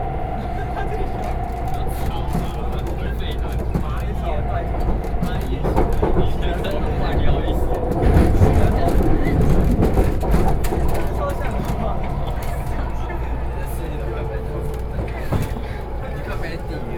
Beitou District, Taipei City - In the subway